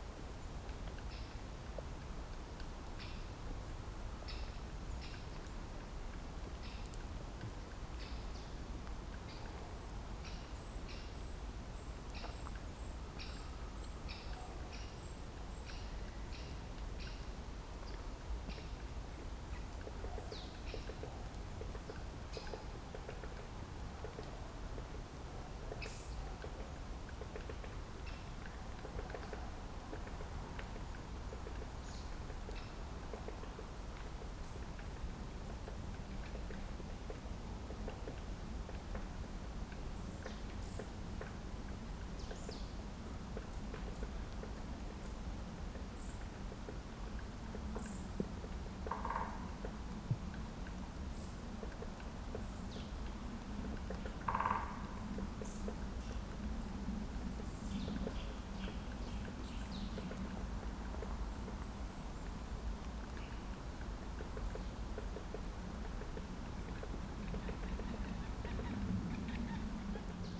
an open space in the forest. winter ambience, a pair of joggers passing by (sony d50)
Suchy Las, Poland